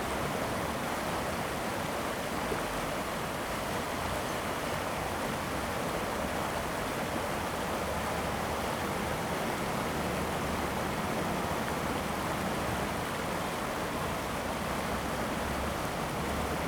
吉安溪, Ji'an Township - Streams of sound
Streams of sound, Traffic Sound, Combat aircraft flying through, Very hot weather
Zoom H2n MS +XY